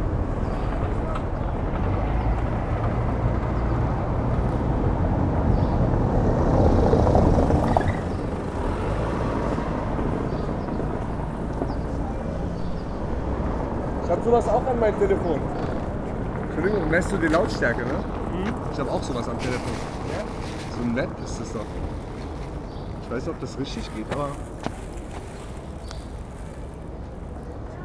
Berlin: Vermessungspunkt Friedel- / Pflügerstraße - Klangvermessung Kreuzkölln ::: 08.05.2012 ::: 16:09
Berlin, Germany, 8 May 2012